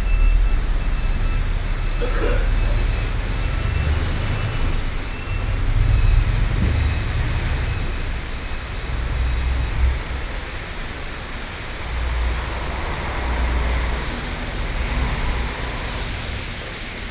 Traffic Noise, Sound of conversation among workers, Sony PCM D50, Binaural recordings
信義區, 台北市 (Taipei City), 中華民國, 6 July 2013, 14:52